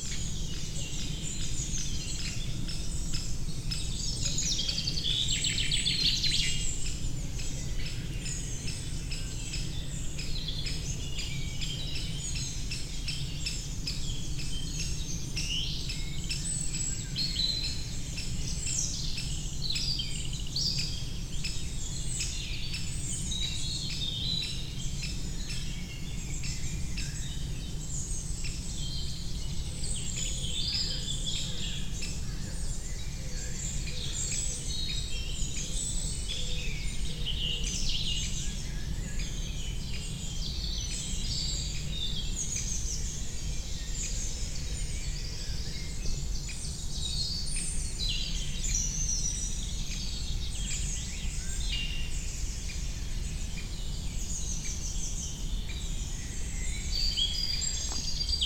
Montigny-le-Tilleul, Belgique - Birds in the forest
Lot of juvenile Great Tit, anxious Great Spotted Woodpecker (tip... tip... tip...), Common Chaffinch.
3 June, 08:30